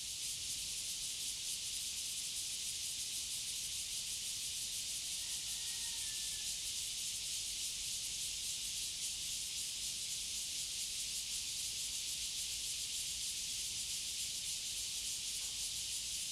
崁頂路125號懷恩園區, Guanshan Township - Cicadas and Chicken sounds
In the cemetery, Cicadas sound, Traffic Sound, Very hot weather
Zoom H2n MS+ XY
Guanshan Township, Taitung County, Taiwan, 7 September 2014